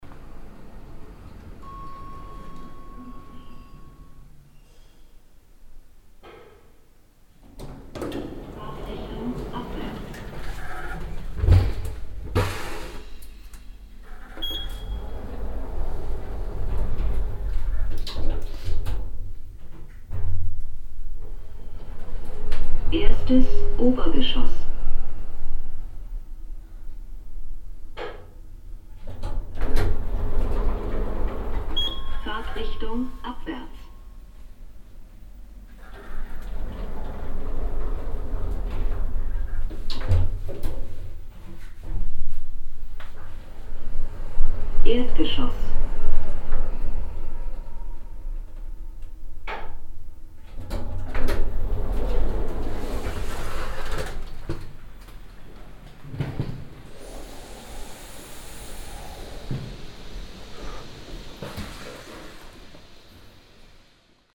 a second recording of the same elevator - now driving downwards
soundmap d - social ambiences and topographic field recordings
Wolfsburg, Germany